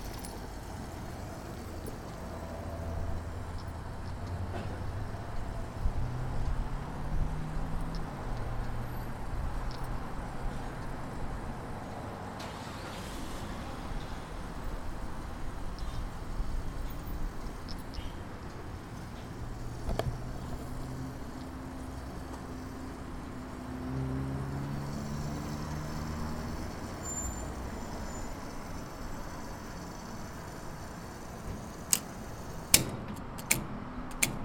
Boulder, CO, USA - Gas Station